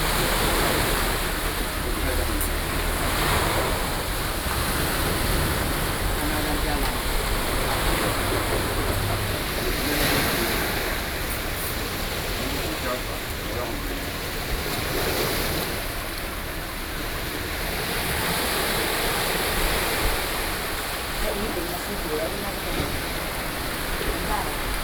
Shimen, New Taipei City - Waves
桃園縣 (Taoyuan County), 中華民國, June 2012